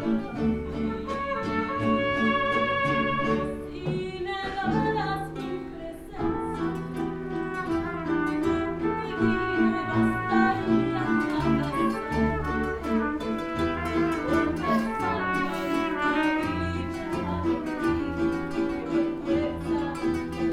neoscenes: Garrisons memorial mariachi band
CO, USA, 21 January 2012